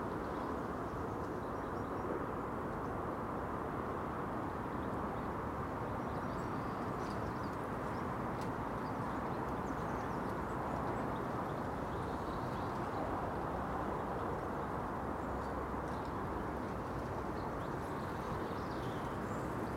Contención Island Day 79 outer southeast - Walking to the sounds of Contención Island Day 79 Wednesday March 24th
The Drive Moor Crescent Little Moor Highbury Mildmay Road Brentwood Avenue Tankerville Terrace
Cordons espaliers and pleaches
an orchard of pruning
a blue tit flies through
Neatened trees
against the fence
the tumble of water tank compost bin and bug hotels
Gavin May Queen Howgate Wonder
Ouillin’s Gage
Vranja Quince
England, United Kingdom, March 2021